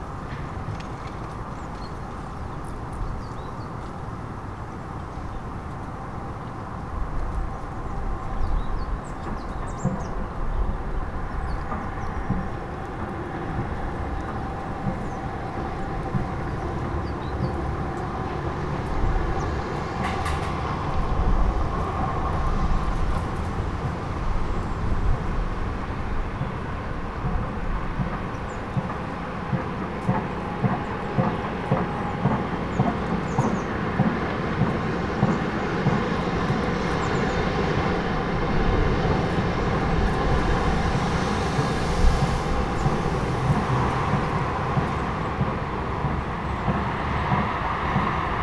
Recording of a Saturday afternoon with a distant construction site banging.
Recorded with UNI mics of a Tascam DR100 mk3.
województwo małopolskie, Polska